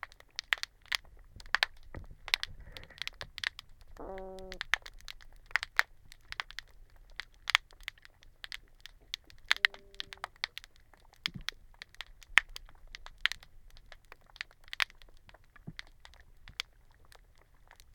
Hydrophone recording in Sarasota Bay. A very helpful professor of marine biology/acoustic ecology at New College of Florida identified the pervasive snaps as coming from snapping shrimp and the repeated sounds at 1 sec, 41 secs, 56 secs, 1:11 and 1:17 as being produced by male toadfish to attract females for spawning and to defend their territory.
Florida, United States, 22 March 2021